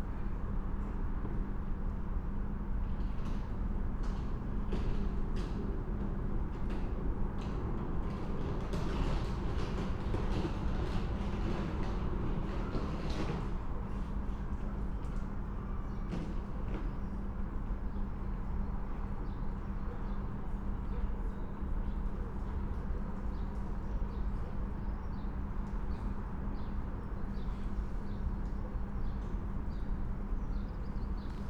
A Train Arrives at Great Malvern Station.
A small event. An announcement, a train arrives and a few people leave.
MixPre 6 II with 2 Sennheiser MKH 8020s on the surface of the platform